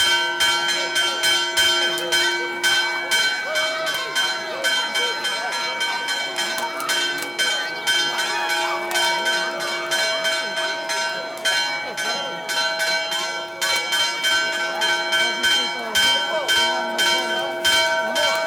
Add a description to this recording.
Chuva de cavacas e sinos nas Festas de S. Gonçalinho